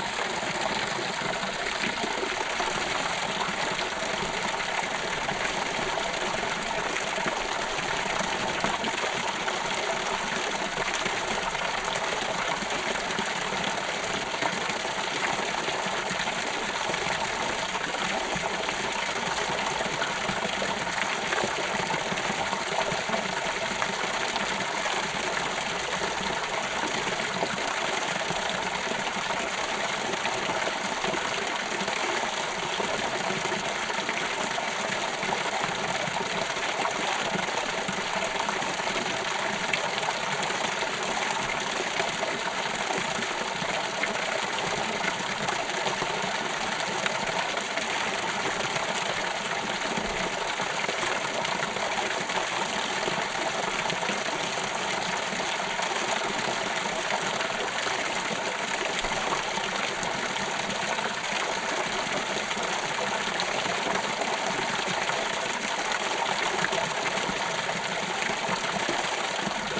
Creek, Bircza, Poland - (77 BI) Creek
Recording of a creek.
Recorded with Soundman OKM on Sony PCM D100